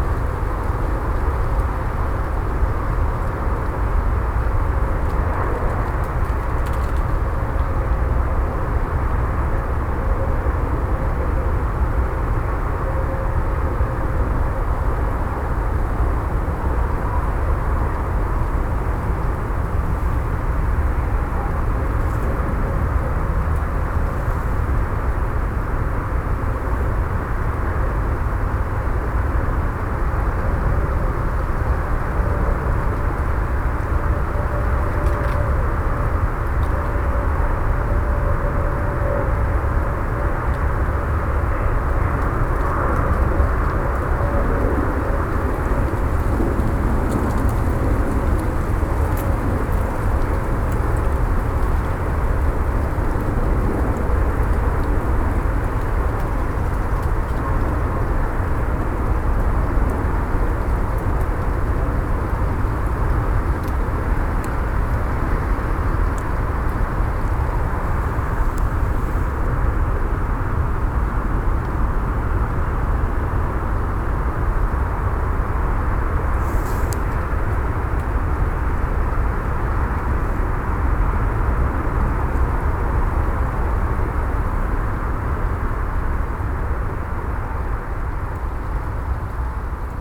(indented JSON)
{
  "title": "Weetfeld, Hamm, Germany - Lanfermannweg",
  "date": "2014-11-23 17:37:00",
  "description": "quiet autumn fields, air traffic, the motorway about 1 km away…\nstille Herbstfelder, Flugverkehr, noch etwa 1km bis zur Autobahn…\nBefore due to meet some representatives of an environmental activist organization in Weetfeld, I’m out exploring the terrain, listening, taking some pictures…\nEin paar Tage vor einem Treffen mit Vertretern der “Bürgergemeinschaft gegen die Zerstörung der Weetfelder Landschaft”, fahre ich raus, erkunde etwas das Terrain, höre zu, mache ein paar Fotos…\n“Citisen Association Against the Destruction of the Environment”\n(Bürgergemeinschaft gegen die Zerstörung der Weetfelder Landschaft)",
  "latitude": "51.63",
  "longitude": "7.79",
  "altitude": "70",
  "timezone": "Europe/Berlin"
}